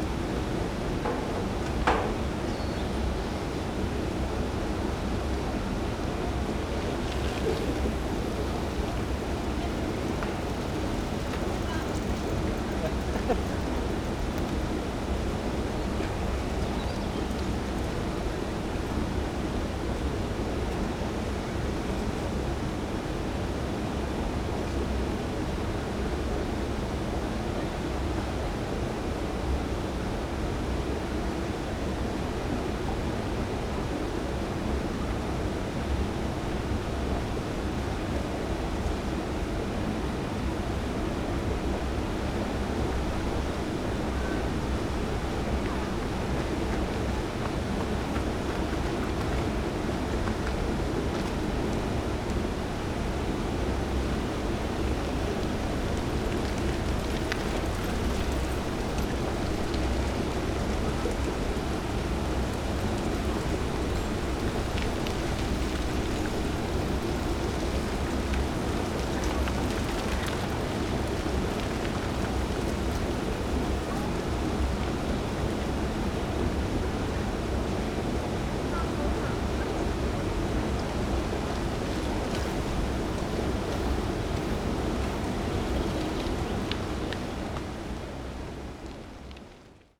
Poznan, Golecin district, at Rusalka lake - water outlet
recording at a concrete structure - a housing for a large water outlet. water from the lake drains at that place. the gush of water was recorded at the back of the structure thus it sounds as if it was low pass filtered. it creates nice, full drone that spreads around the area. also voices of nearby strollers, runners, bikes, playing kids, trains and myriads of birds.